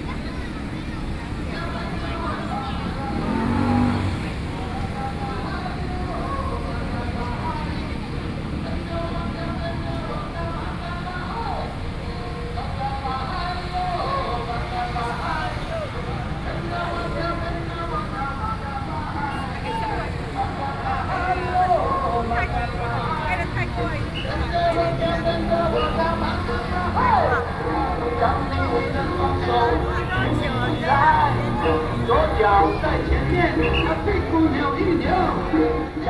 Chongsheng St., Yilan City - Festival
Festival, Traffic Sound, At the roadside
Sony PCM D50+ Soundman OKM II